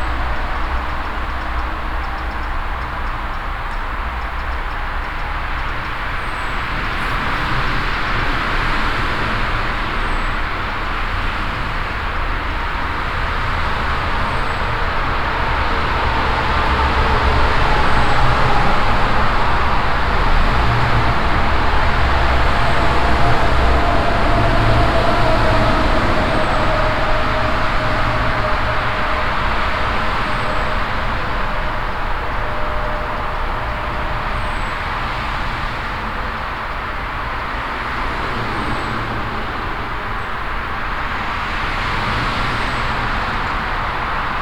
In a narrow but long footway tunnel underneath the highway A4. The sound of the constant traffic passing by and resonating inside the tube. In the distance the high constant chirp of a eager bird in the forest at the end of the tunnel. At the end the sound of a bicycle entering the tunnel and passing by.
soundmap nrw - social ambiences and topographic field recordings